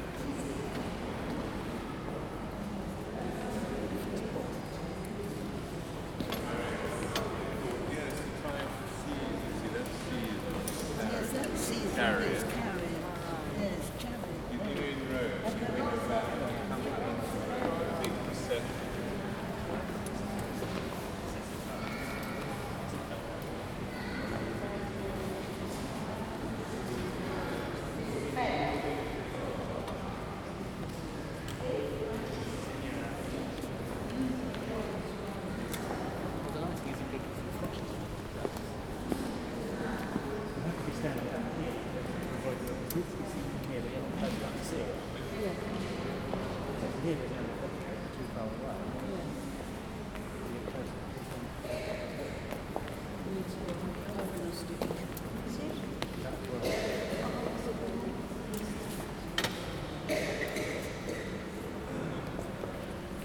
Royal Academy of Arts, Burlington House, Piccadilly, Mayfair, London, UK - Charles I: King and Collector Exhibition, Royal Academy of Arts.
A second recording walking through the Royal Academy Charles I: King and Collector exhibition.
Recorded on a Zoom H2n